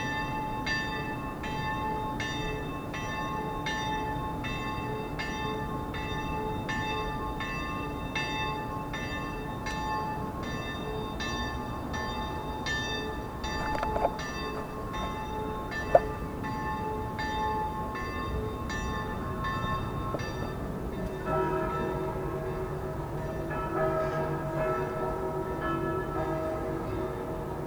Astronomical Tower
Combination of Bells ringing on a Sunday at noon
Praha 1-Staré Město, Czech Republic, 12 April 2015